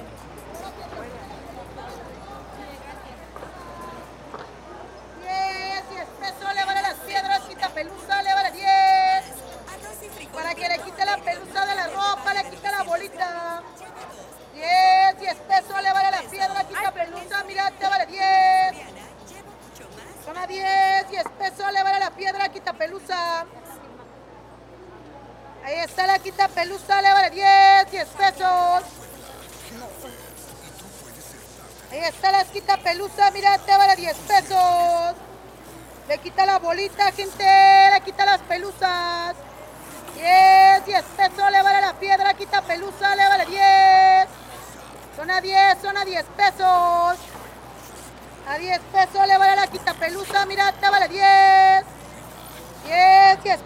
Jardín de San Luis Park, Av. 10 Ote., Centro histórico de Puebla, Puebla, Pue., Mexique - Puebla (Mexique) - 5 de Mayo
Puebla (Mexique)
La rue est saturée d'annonces publicitaires.
ambiance
Puebla, México, 20 September 2019, 10:00